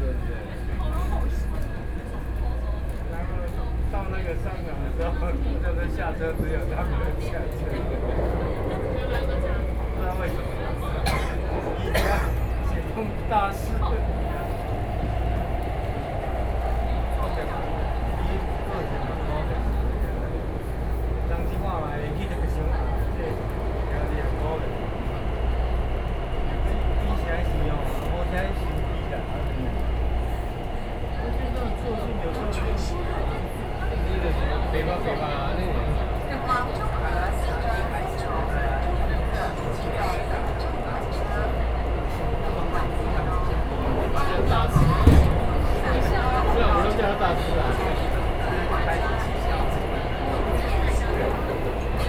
Shilin District, Taipei - Inside the MRT
Inside the MRT, from Shilin to Downtown, Sony PCM D50 + Soundman OKM II
信義區, 台北市 (Taipei City), 中華民國, 1 July